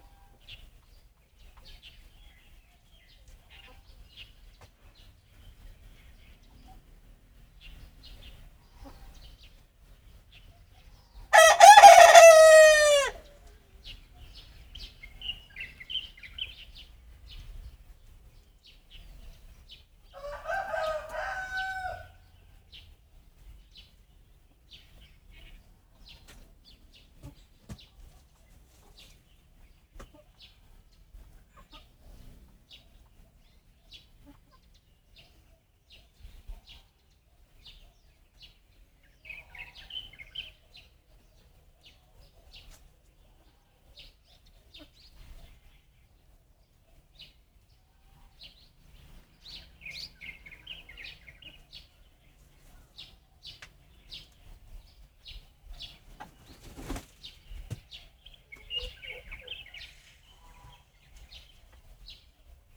Shueilin Township, Yunlin - Small village
Crowing sounds, Birds singing, My hometown, Rode NT4+Zoom H4n
雲林縣(Yunlin County), 中華民國, 14 January 2012, 07:23